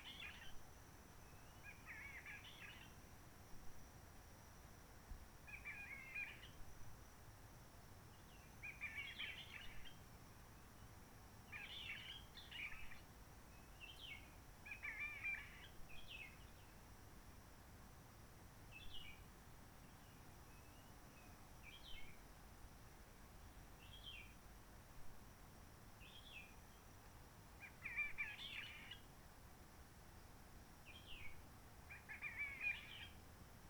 Roche Merveilleuse, Réunion - Merle de lîle de la Réunion.
Merle de la Réunion.
Les piafs de l'île de la Réunion ont eu un heureux événement, d'un coup les humains se sont arrêté d'envahir la forêt avec des marmailles hurlants, on arrêté de se promener en ULM et en hélico, depuis le 19 mars 2020 c'est calme même quand il fait beau, et depuis des années on n'avait pas pu faire l'expérience du beau temps, ciel bleu + soleil en même temps que les chants d'oiseaux. Mais les oiseaux ne sont pas si actifs que cela, ils n'ont pas encore repris l'habitude d'exploiter cette partie de la journée pour leur communications longue distance.